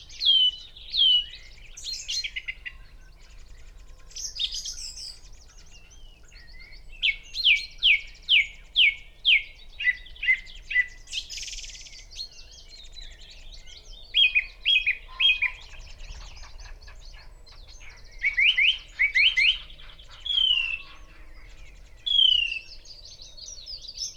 Green Ln, Malton, UK - song thrush ... mainly ..
song thrush ... mainly ... xlr mics in a SASS to Zoom H5 ... SASS wedged into the crook of a tree ... bird calls ... song ... from ... pheasant ... buzzard ... crow ... wren ... wood pigeon ... red-legged partridge ... dunnock ... blackcap ... chaffinch ... linnet ... willow warbler ... long-tailed tit ... blue tit ... some background noise ... and a voice ...